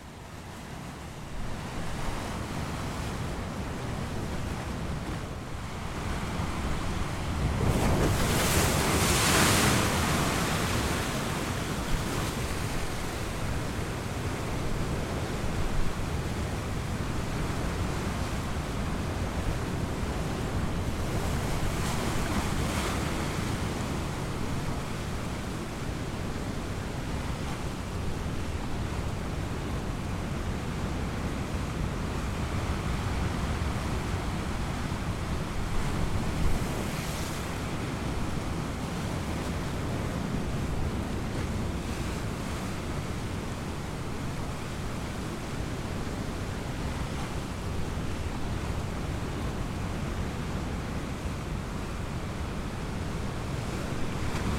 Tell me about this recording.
Journée. île grande. vent très fort et mer entendu à l'abri d'un rocher . Day. île grande. heavy wind and waves heard behind a rock. April 2019. /Zoom h5 internal xy mic